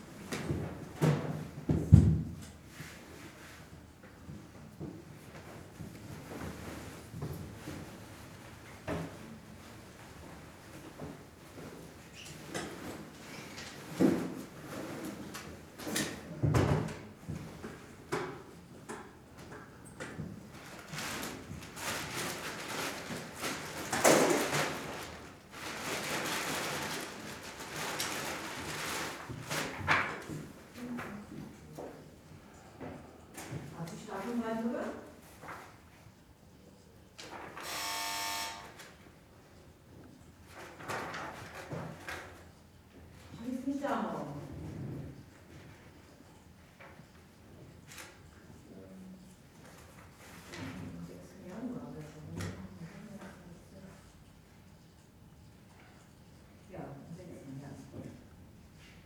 Berlin Kreuzberg Schlesische Str. - pediatrist
at the pediatrist, waiting room ambience
(tech note: olympus ls-5)